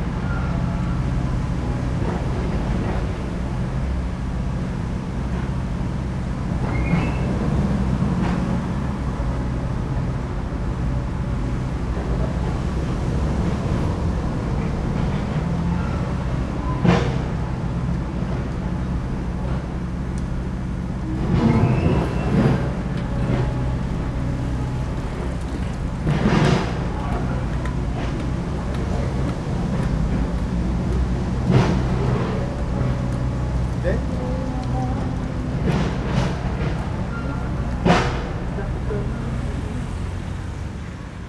Berlin: Vermessungspunkt Friedelstraße / Maybachufer - Klangvermessung Kreuzkölln ::: 20.09.2013 ::: 13:19
Berlin, Germany, 20 September 2013, 13:19